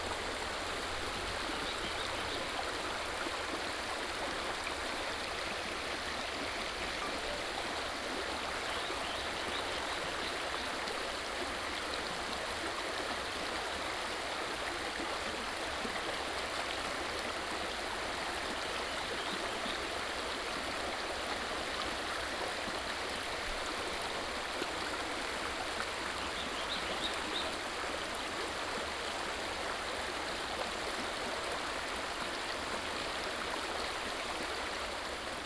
中路坑溪, Puli Township - sound of water streams
The sound of water streams, Birds singing
Nantou County, Taiwan, 26 August 2015, 16:13